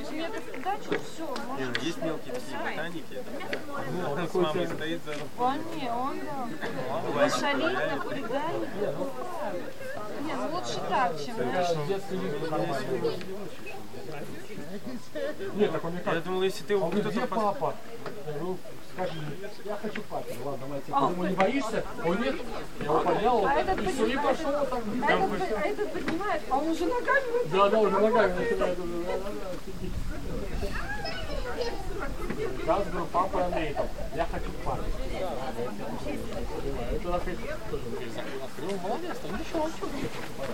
Rubikiai, Lithuania, russian tourists
drunken roussian tourists at the lake